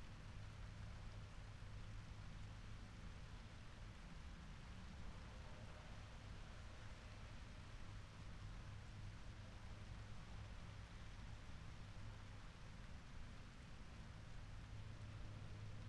thunderstorm at distance ... SASS on a tripod ... bird calls ... wing beats ... from starling ... wood pigeon ... collared dove ... blackbird ... background noise ... traffic ... voices ... donkey braying ... car / house alarm ... and then the rain arrives ...
Malton, UK, 4 August 2019